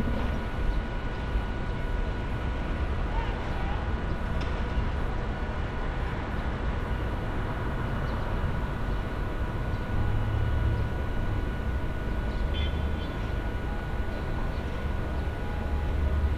recorded with Hookie Audio Bluetooth binaural microphones, You hear construction work, street noise etc and sometimes the characteristic short horn blows from taxi drivers for getting attention of customers.
Rev Michael Scott Street, Windhoek, Namibia, 1 May 2019